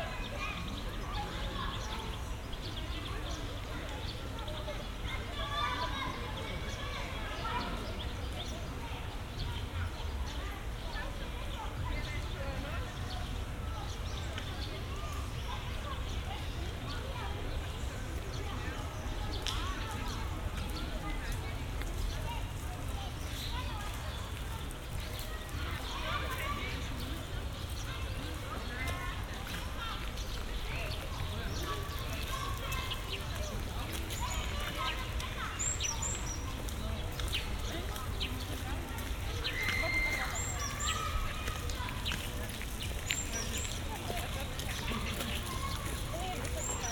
Evening at Bernardinai garden, near kids place